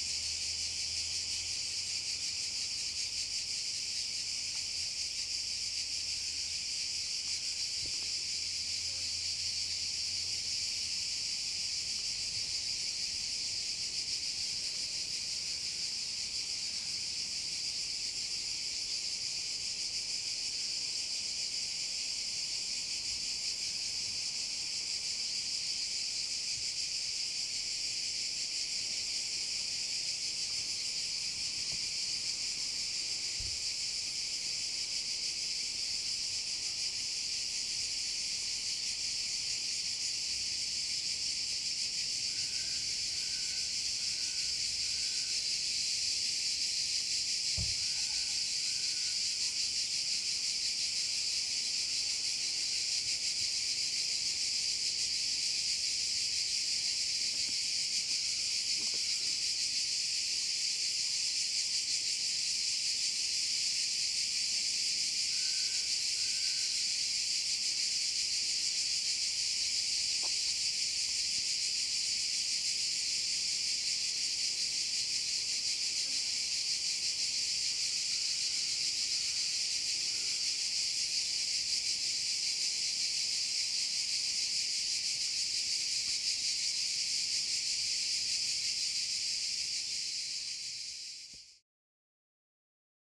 Cicadas surround us as we stand on a ancient stone bridge over the drying river bed.